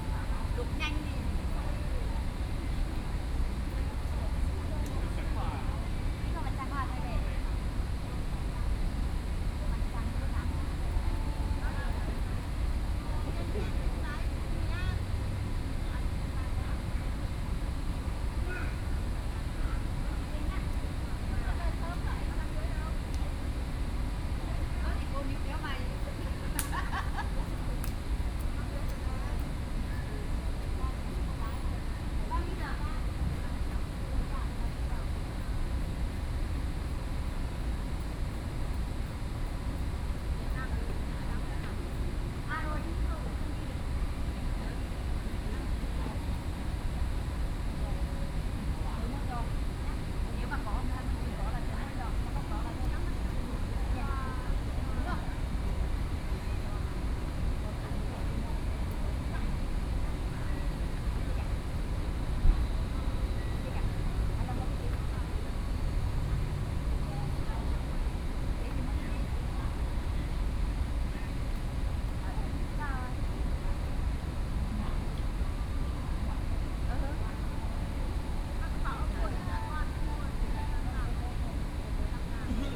Group chat between foreign caregivers, Sony Pcm D50+ Soundman OKM II